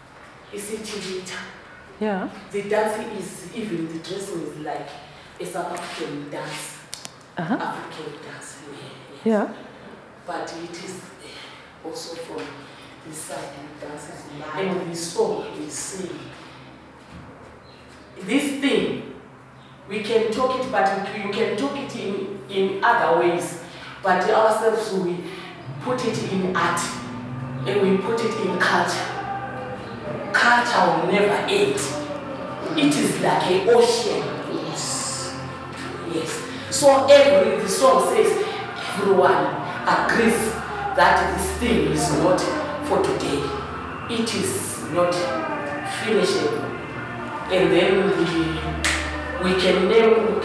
Community Hall, Matshobana, Bulawayo, Zimbabwe - Culture will never end...!
Ellen Mlangeni, the leader of Thandanani introduces a stick-fighting song. The vigorous performance in the bare concrete rehearsal room was too much fro my little field-recording equipment to take, thus the cut at the song...
30 October